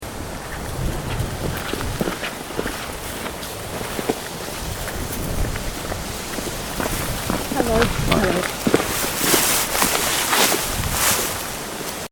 people walk past on path